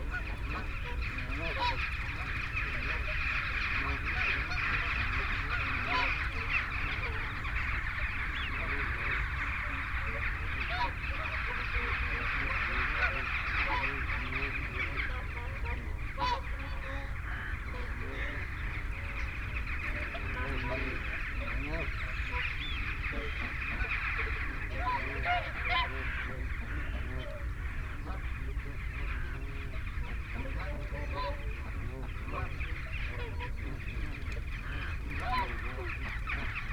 Dumfries, UK - whooper swan soundscape ... dummy head ...
whooper swan soundscape ... folly pond ... dummy head with luhd in ear binaural mics to olympus ls 14 ... bird calls from ... mute swan ... canada geese ... mallard ... oystercatcher ... wigeon ... shoveler ... snipe ... teal ... jackdaw ... redshank ... barnacle geese flock fly over at 23 mins ... ish ... compare with sass recording made almost the same time in the scottish water hide some 100m+ away ... time edited extended unattended recording ...